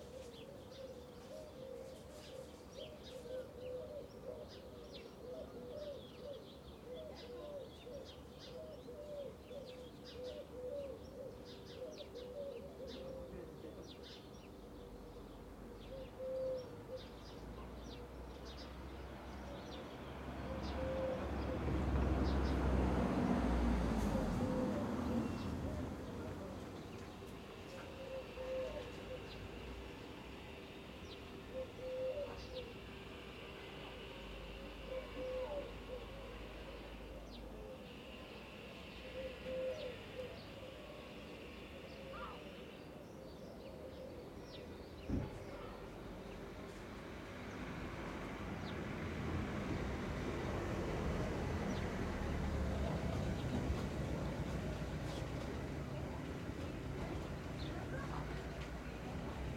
Chemin des Sablons, La Rochelle, France - long 30 neighborhood sound sequence
long neighborhood sound sequence at 10 a.m.
Calm of covid19
ORTF DPA4022 + Rycotte + Mix 2000 AETA = Edirol R4Pro